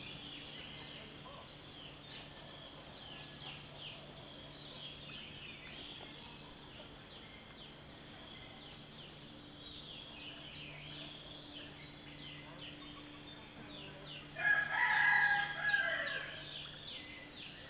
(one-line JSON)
{"title": "Unnamed Road, Chini, Pahang, Maleisië - dawn lake chini", "date": "2006-01-13 06:00:00", "description": "dawn at lake chini. we stayed in a simple hut hosted by the unforgettable mister Jones.", "latitude": "3.43", "longitude": "102.92", "altitude": "58", "timezone": "Asia/Kuala_Lumpur"}